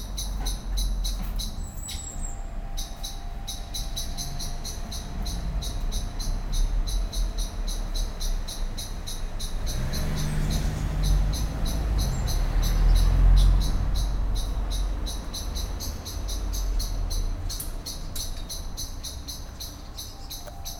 cologne, lütticherstrasse, amsel
amsel aufgeregt schimpfend fliegt von baum zu baum, im hintergrund fahrradfahrer und fussgänger auf dem bürgersteig, morgens
soundmap nrw: social ambiences/ listen to the people - in & outdoor nearfield recordings